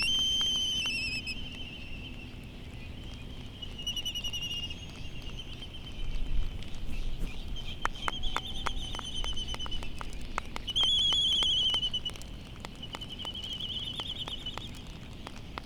Midway Atoll soundscape ... Sand Island ... bird call from Laysan albatross ... white tern ... black noddy ... distant black-footed albatross and a cricket ... open lavaliers on mini tripod ... background noise and some wind blast ... one or two bonin petrels still leaving ...